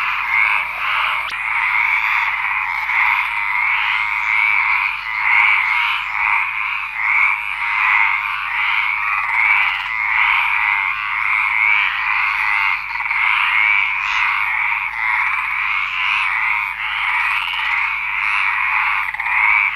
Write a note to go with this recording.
A recording made on the way home from the local bar. SonyWM D6 C cassette recorder and Sony ECMS 907